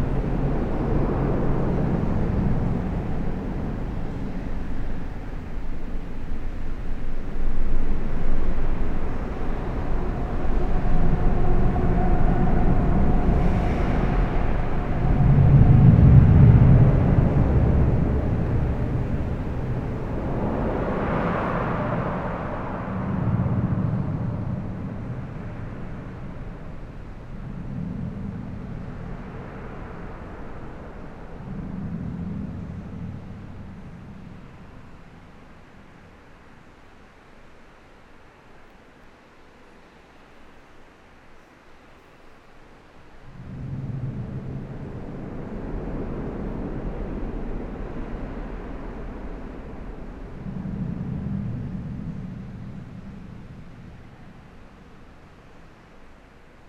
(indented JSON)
{
  "title": "Dinant, Belgium - Charlemagne bridge",
  "date": "2017-09-29 10:35:00",
  "description": "Sound of people driving above, from the inside of the bridge. Its the tallest bridge of Belgium.",
  "latitude": "50.24",
  "longitude": "4.92",
  "altitude": "113",
  "timezone": "Europe/Brussels"
}